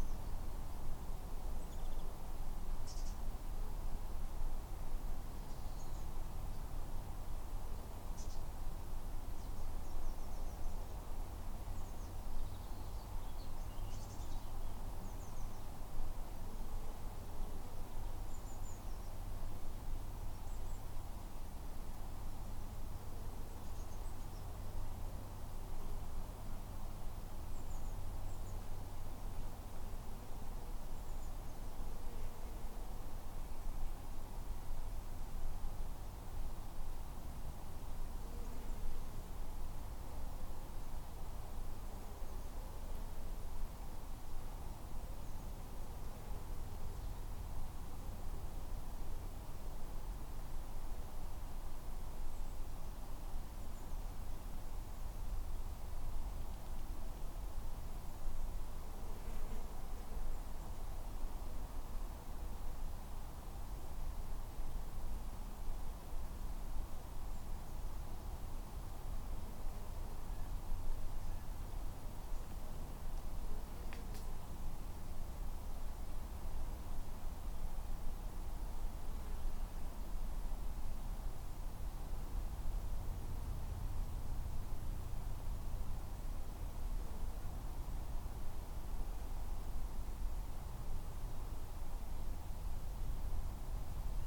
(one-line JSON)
{"title": "Port Meadow, Oxford, UK - Quiet birds and flies and loud trais and planes on Port Meadow", "date": "2015-07-23 19:00:00", "description": "This is the sound in a quiet corner of Port Meadow; one of the many places in Oxford which is extremely rural and where county life meets city life. Horses and cattle graze on the meadow; folk enjoy swimming and boating on the Thames; many people enjoy walking on the green; and large trains pass on the rail line directly next to it. In this recording I was trying to capture something of the ambience of this place; a very simple recording made with EDIROL R-09 in the grass underneath a tree.", "latitude": "51.77", "longitude": "-1.28", "altitude": "59", "timezone": "Europe/London"}